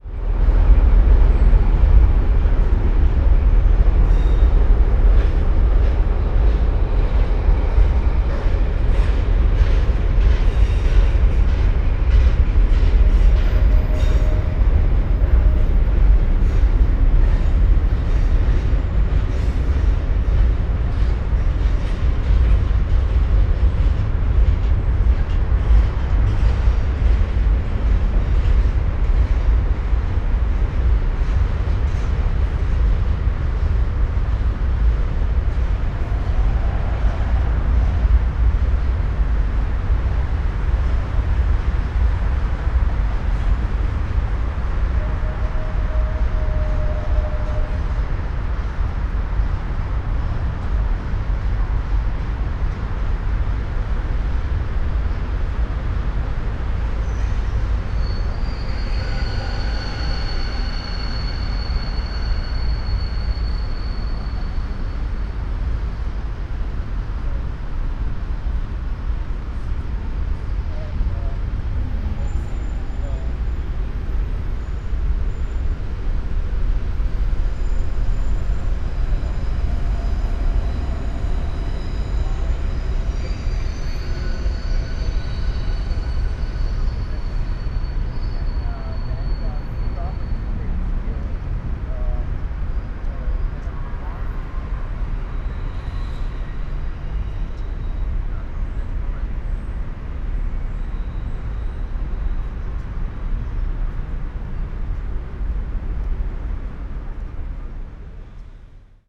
Märkisches Ufer, Mitte, Berlin, Germany - trains
across the river Spree there is a beautiful train tracks curve, trains groan area, cargo train
Sonopoetic paths Berlin
September 2015